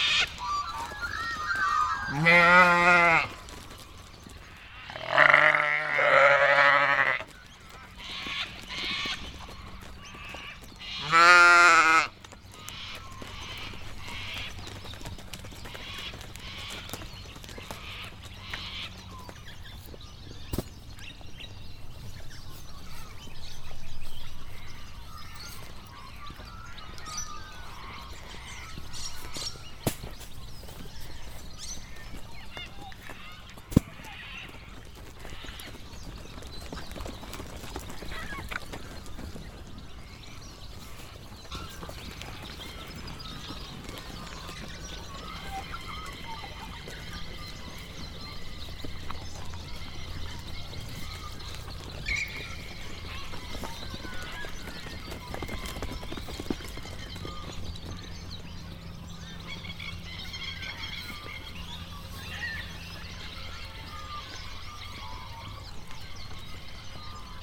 Feeding Time, Littlehampton, South Australia - Feeding Time - Suffolk Sheep
Suffolk sheep being fed on lucerne hay. The squawking birds in the background are Sulphur Crested Cockatoos. (other birds include plover, magpie, currawong, various parrots and the neighbours chickens)
Recorded with Rode NT4 (in a Rode Blimp) straight into a Sound Devices 702. No post production other than trimming and volume envelope.
19 April 2009